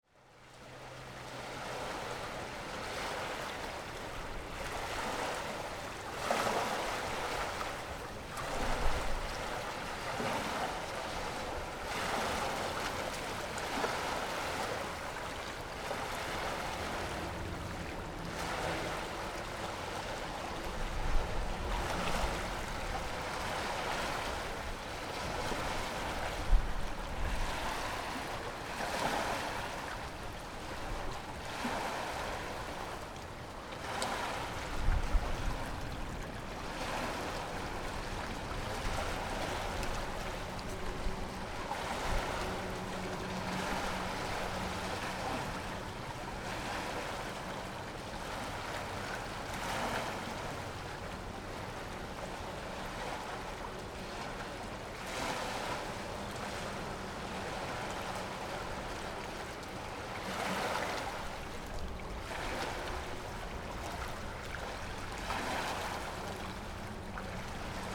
Wave and tidal, On the coast
Zoom H6 + Rode NT4